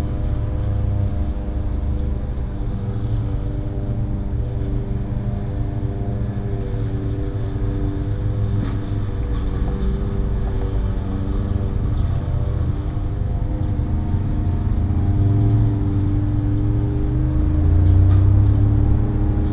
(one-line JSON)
{"title": "Morasko, Planetarna road - mowing crew", "date": "2019-09-19 08:07:00", "description": "a gardening crew starts their work in a big, luxury estate lawn. Man mowing, hitting concrete edges of the drive way, rocks, sticks. a bit of nature on the ride side. (roland r-07)", "latitude": "52.47", "longitude": "16.90", "altitude": "109", "timezone": "Europe/Warsaw"}